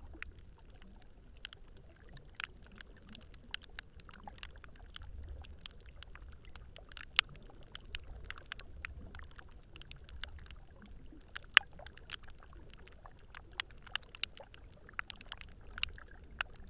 Trachilos, Crete, underwater activities
underwater click'n'crack